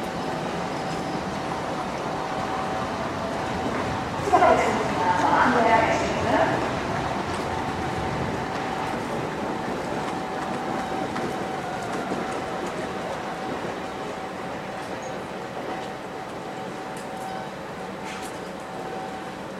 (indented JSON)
{
  "title": "Ostkreuz Station construction from elevated walkway",
  "latitude": "52.50",
  "longitude": "13.47",
  "altitude": "36",
  "timezone": "GMT+1"
}